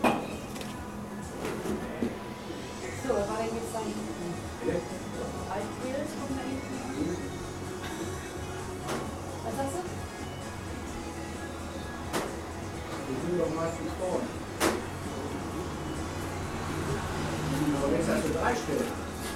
Essen-Karnap, Deutschland - Alt Carnap
Alt Carnap, Karnaper Str. 112, 45329 Essen